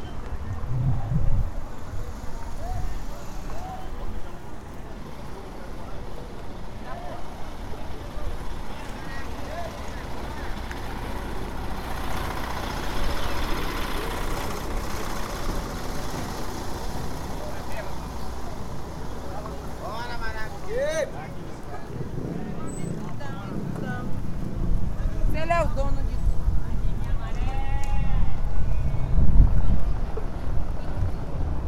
Cachoeira, BA, Brasil - Caminhada pela Antonio Carlos Magalhães
Caminhada a partir da Rua da Feira, até o Cahl. Trabalho realizado para a Disciplina de sonorização I, Marina Mapurunga, UFRB.
Anna Paiva
Bahia, Brazil, 28 March 2014